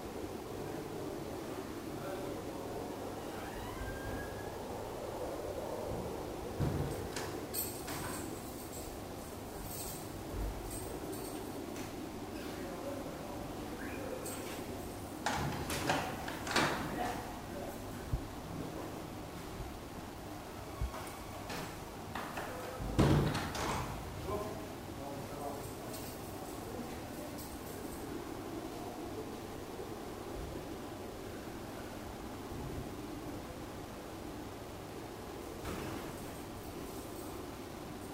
visite de la prison
enregistré lors du tournage fleur de sel darnaud selignac france tv